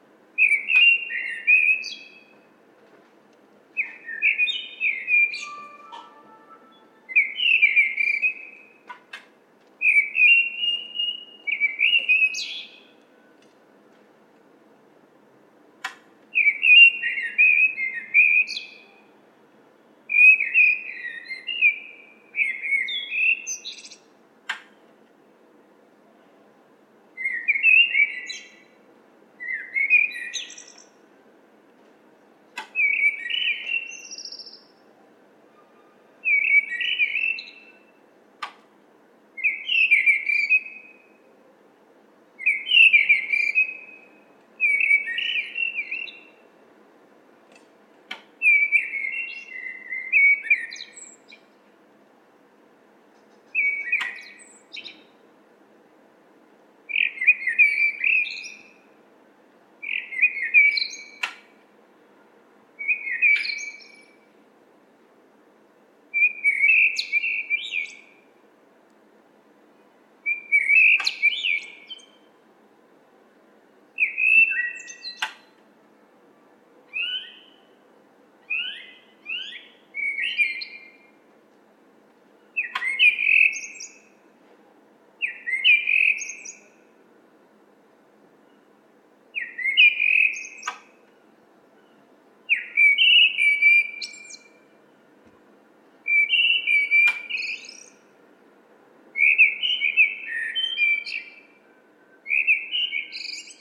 Sound of a blackbird singing in my yard, the sound of my cat who meows on the rooftop, the distant noise of people entering the building, sound of dual-tone siren away. Zoom H4N + ME66 Shotgun
Île-de-France, France métropolitaine, France